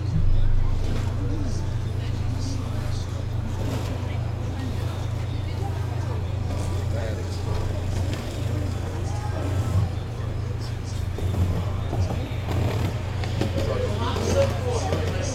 The College of New Jersey, Pennington Road, Ewing Township, NJ, USA - Outside of a TCNJ Residence Hall
This was recorded outside of The College of New Jersey's freshman Wolfe Hall. It is early evening/mid-day and it is not particularly busy. The ambience is likely from a heating unit or other machinery nearby on campus.